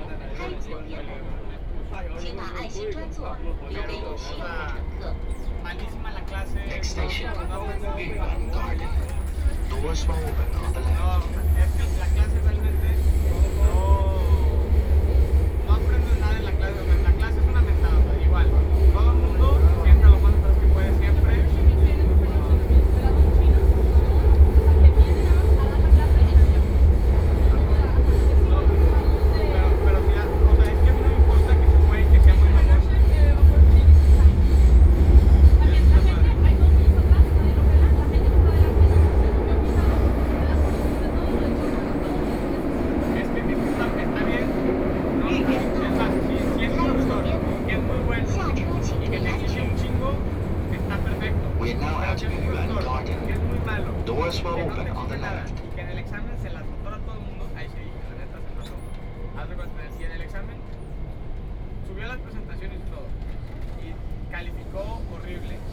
Henan Road, Shanghai - Line 10 (Shanghai Metro)
from Laoximen Station to Yuyuan Garden Station, Binaural recording, Zoom H6+ Soundman OKM II